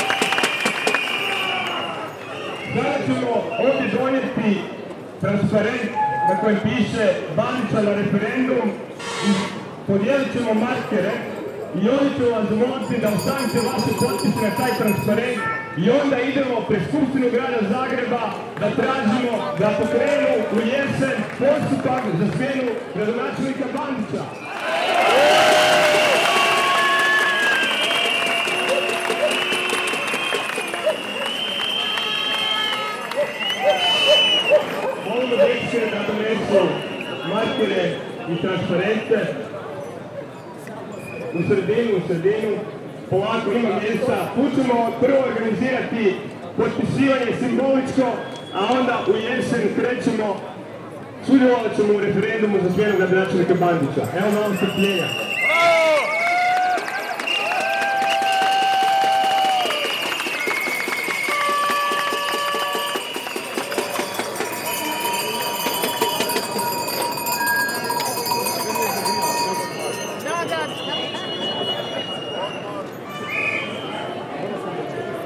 Zagreb, demonstrations against devastation of Varsavska - demanding resignation of mayor
one of the demonstration leaders demanding resignation of the mayor, reactions of citizens
City of Zagreb, Croatia